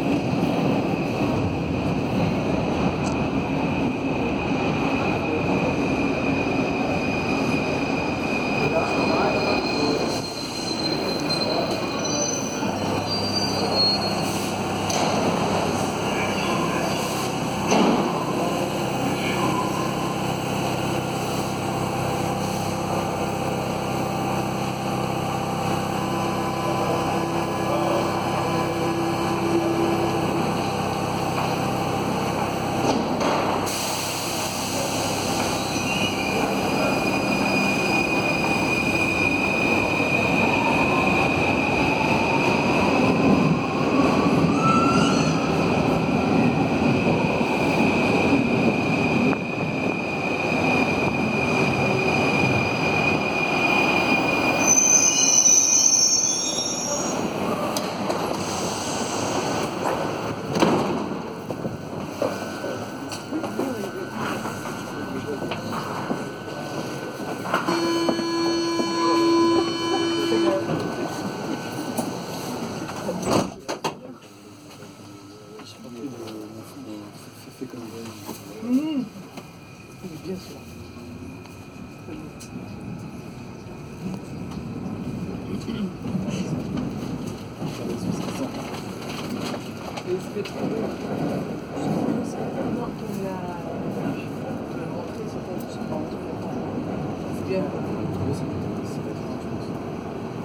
Rue de Menilmontant, Paris, France - Ménilmontant subway
In the subway from Menilmontant to Alexandre Dumas.